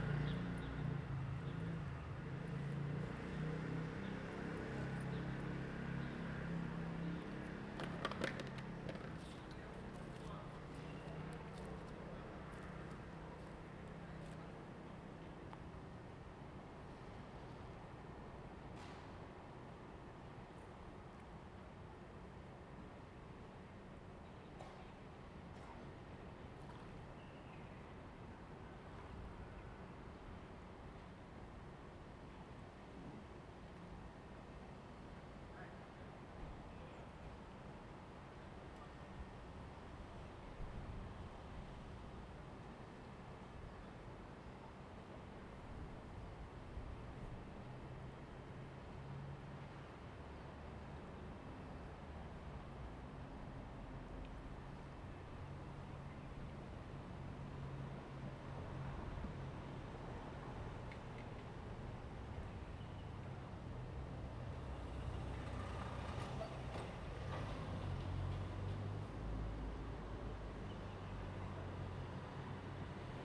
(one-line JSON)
{"title": "新湖漁港, Jinhu Township - In the fishing port", "date": "2014-11-03 16:09:00", "description": "Birds singing, In the fishing port, Aircraft flying through\nZoom H2n MS+XY", "latitude": "24.43", "longitude": "118.41", "altitude": "8", "timezone": "Asia/Taipei"}